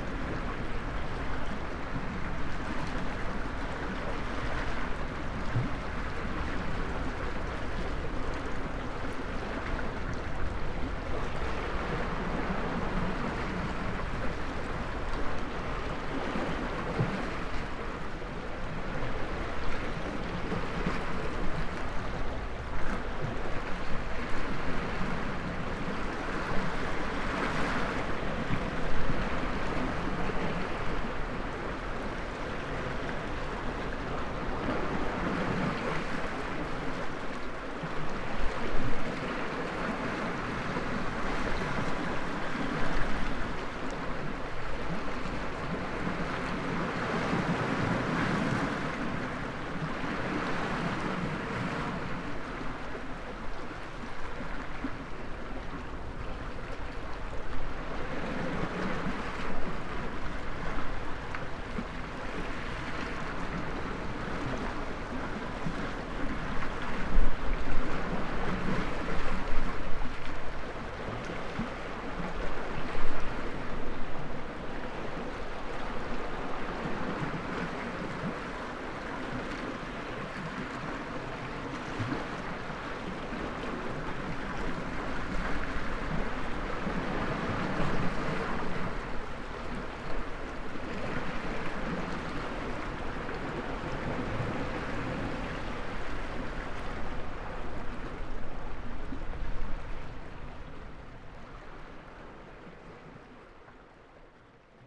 {
  "title": "Pink Floyd Bay, Notia Rodos, Griechenland - Waves on the rocks at Pink Floyd Bay, Rhodos",
  "date": "2021-10-24 12:00:00",
  "description": "Waves on the rocky shore. The bay is called Pink Floyd Bay by the locals. Some said it is because of the bizarre rock formations that resemble a picture on one of the bands Record sleeves, other rumours say that the band actually had some jam sessions on the beach. While probably none of this is true, the place still ist surely beautiful.Binaural recording. Artificial head microphone set up in the windshade of a rockstack about 5 Meters away from the waterline. Microphone facing east.Recorded with a Sound Devices 702 field recorder and a modified Crown - SASS setup incorporating two Sennheiser mkh 20 microphones.",
  "latitude": "35.93",
  "longitude": "27.87",
  "timezone": "Europe/Athens"
}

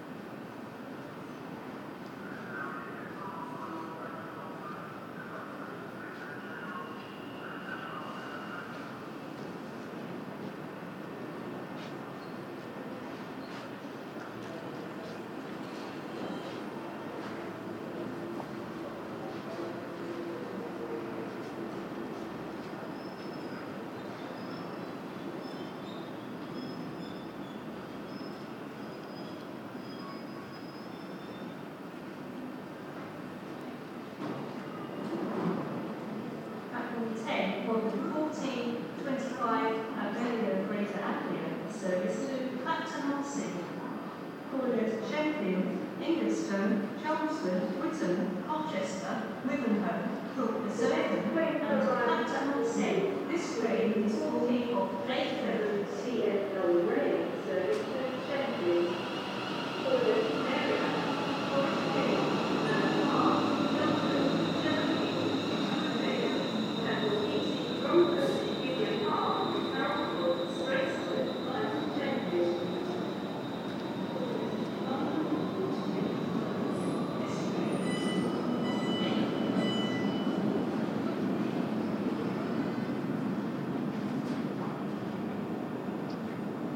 {
  "title": "London, Stratford UK - Stratford, London Train Station - National Rail",
  "date": "2016-01-15 00:44:00",
  "description": "Shotgun mic recording in Stratford Station, Freight Trains and Passenger Trains passing through the station. Very cold day.",
  "latitude": "51.54",
  "longitude": "0.00",
  "altitude": "5",
  "timezone": "Europe/London"
}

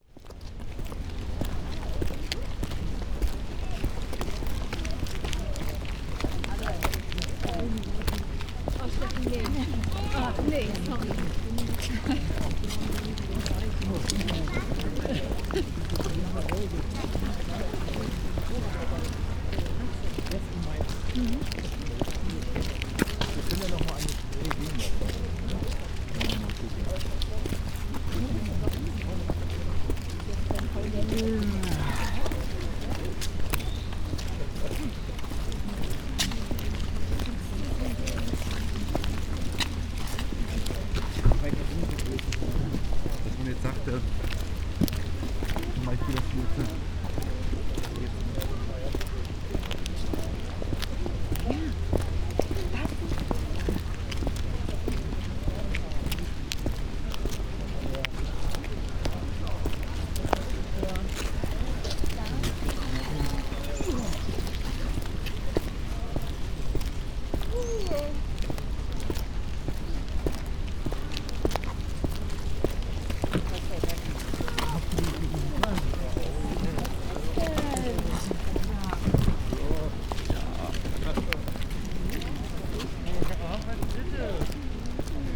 {
  "title": "Spreepark, Plänterwald, Berlin, Germany - walking, asphalt road",
  "date": "2015-09-06 17:38:00",
  "description": "steps, winds, people passing by\nSonopoetic paths Berlin",
  "latitude": "52.48",
  "longitude": "13.49",
  "altitude": "34",
  "timezone": "Europe/Berlin"
}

